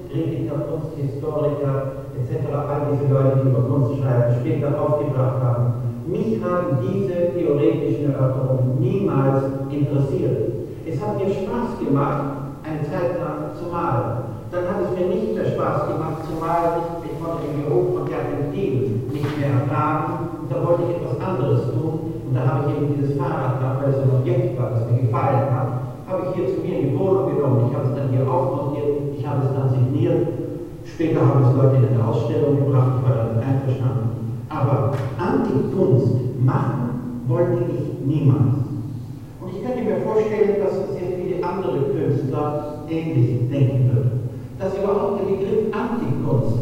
museum castle moyland, beuys archive
On the first floor of the castle inside one of the towers of the Museum Moyland. The sound of a video showing a podium discussion about art and provocation involving J. Beuys from 1970.
soundmap d - topographic field recordings, art places and social ambiences
Bedburg-Hau, Germany